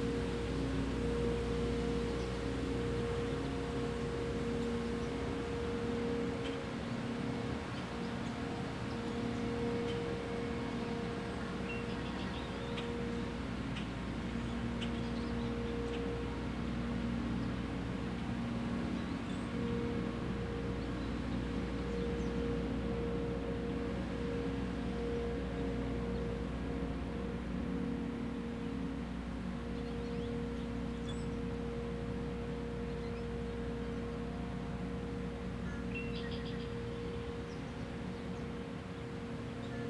On the first Wednesday of every month in the state of Minnesota the outdoor warning sirens are tested at 1pm. This is a recording of one such test. Shortly after the test concludes a street cleaner comes by to clean all the sand from the winter off the streets. This is a true sign of spring
Minnesota, United States, 4 May 2022, 12:46